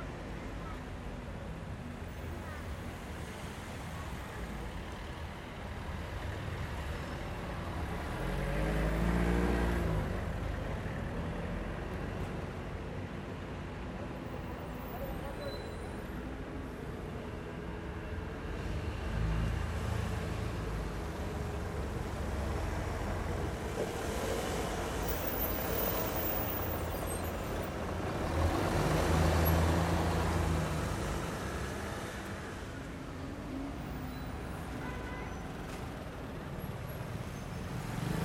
Μιχαήλ Καραολή, Ξάνθη, Ελλάδα - Mpaltatzi Square/ Πλατεία Μπαλτατζή 09:45
Mild traffic, people passing by, talking.